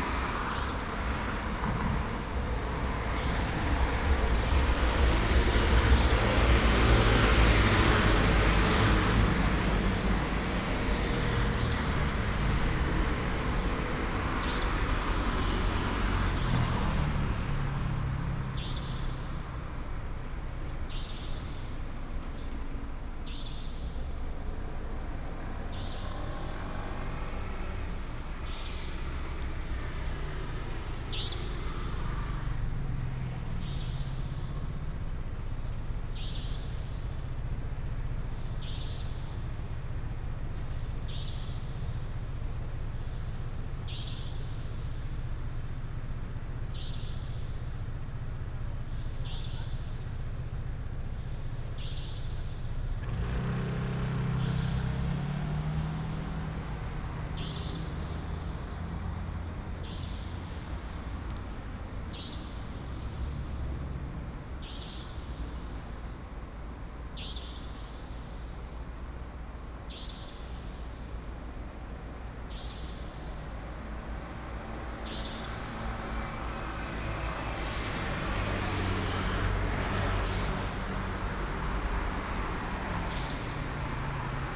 Wufu 4th Rd., Yancheng Dist., Kaohsiung City - Bird and Traffic sound
Night street, Bird sound, Traffic sound
Yancheng District, Kaohsiung City, Taiwan, 25 April, 00:19